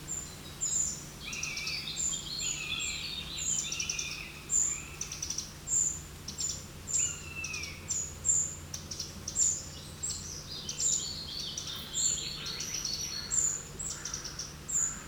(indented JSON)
{
  "title": "Genappe, Belgique - Spotted Flycatcher",
  "date": "2017-07-16 13:34:00",
  "description": "In the Brabant-Wallon rural landscape, the irritating shouts of two Spotted Flycatchers, discussing between them. At the beginning, there's a few sound of agricultural works. After, the birds you can hear are [french name and english name] :\nGobemouche gris (Spotted Flycatcher) - tsii tsii tsii.\nTroglodyte mignon (Eurasian Wren) - tac tac tac tac\nPic vert (European Green Woodpecker)\nBuse variable (Common Buzzard) - yerk, yeerk.\nIt's great to listen the Common Buzzard, as it's not so easy to record it, it's a very moving bird of prey.",
  "latitude": "50.65",
  "longitude": "4.51",
  "altitude": "117",
  "timezone": "Europe/Brussels"
}